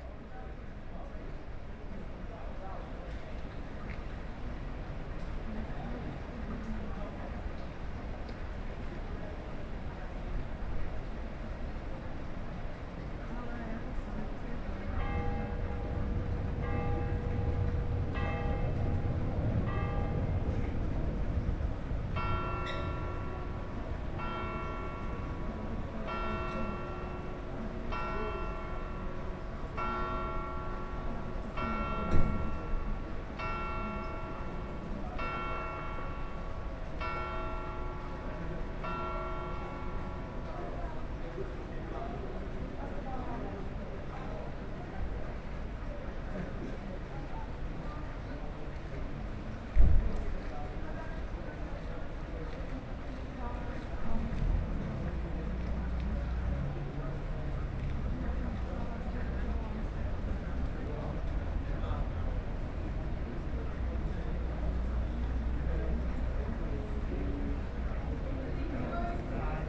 Seminární zahrada, Horní, Horní Brána, Český Krumlov, Czechia - Night Bells in Český Krumlov
Recording of bells from observation platfrom/view point Seminární zahrada.
Jihozápad, Česká republika, 18 August, 22:51